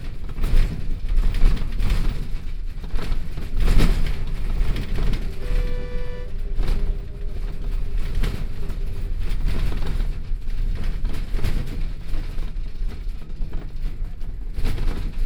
Saundatti road, Bus, Damaged road